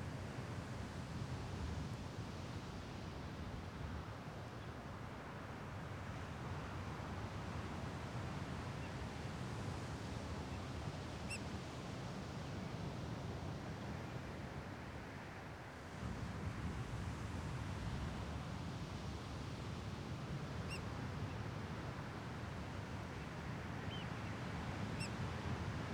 Island - Bird on the beach in a fog